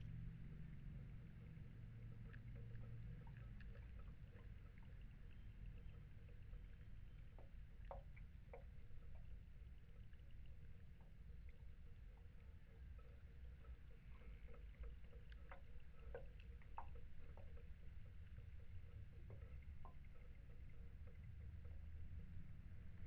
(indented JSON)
{
  "title": "Segbroeklaan, Den Haag - hydrophone rec at a little dock, next to the bridge",
  "date": "2009-04-22 18:40:00",
  "description": "Mic/Recorder: Aquarian H2A / Fostex FR-2LE",
  "latitude": "52.08",
  "longitude": "4.27",
  "altitude": "8",
  "timezone": "Europe/Berlin"
}